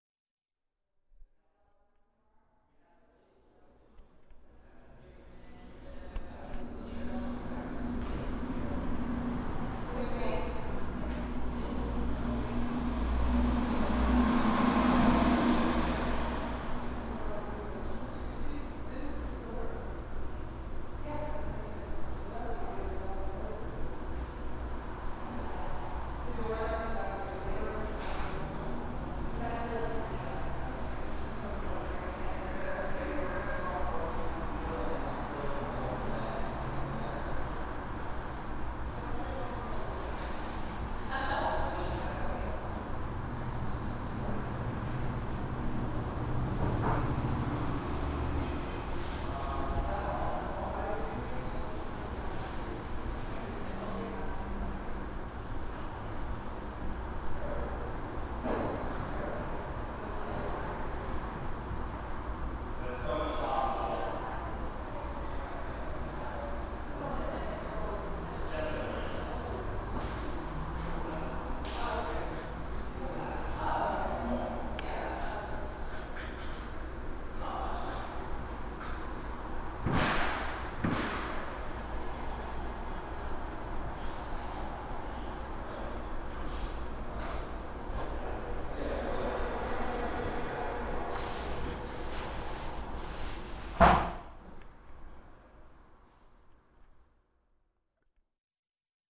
1965 Main Street, VIVO Media Arts Centre, Preparing the Gallery
Preparing the small gallery at VIVO. Recorded from the large studio, facing Main Street